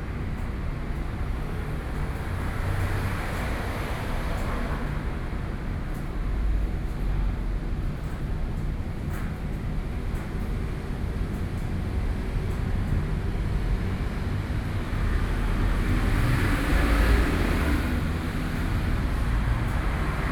Traffic noise, In front of the Laundromat, Sony PCM D50 + Soundman OKM II
台北市 (Taipei City), 中華民國, 15 April 2013